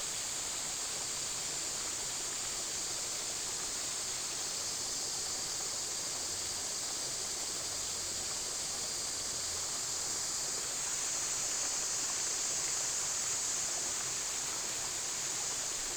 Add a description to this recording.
stream, Cicada, traffic sound, birds sound, Next to the bridge, Binaural recordings, Sony PCM D100+ Soundman OKM II